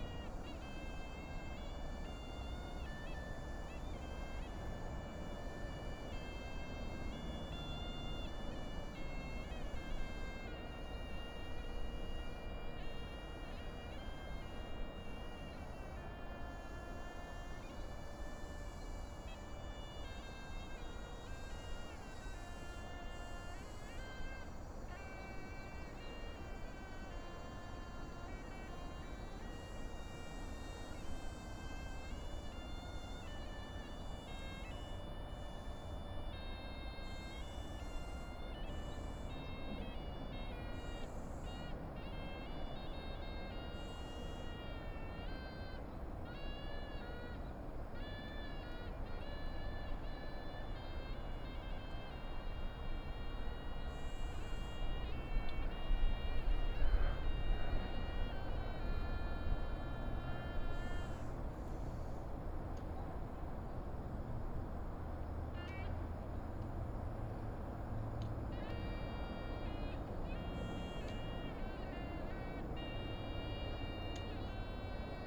金獅步道, Hukou Township, Hsinchu County - Near high-speed railroads
Near high-speed railroads, traffic sound, birds, Suona